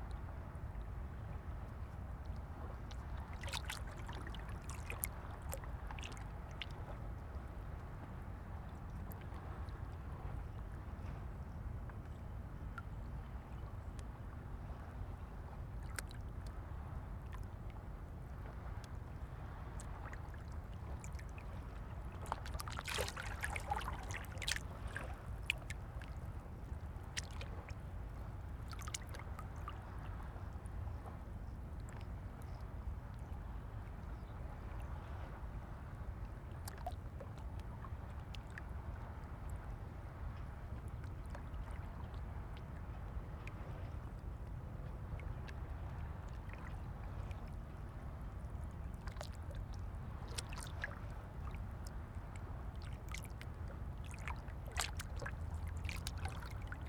Jumeira 3 - Dubai - United Arab Emirates - DXB Jumeira Beach Light Splashes On Rocks
I had to have my H4n right on the edge of the rocks to get a good signal which was pretty scary but I really happy with this recording.